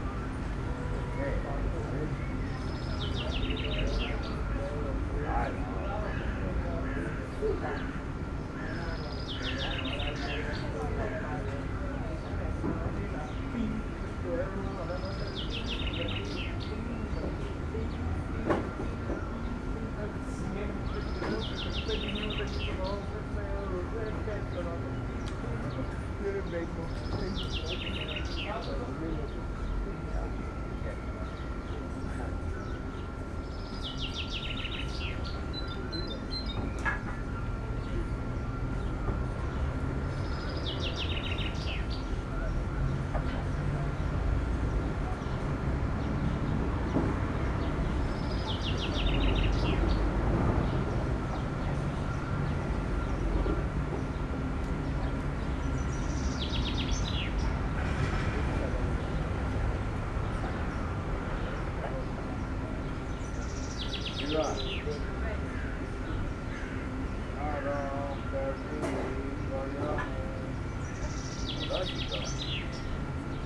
Bus Station, Nova Gorica, Slovenia - Birds and folk chatting
Recorded under a big tree in the main bus station near the Bus bar.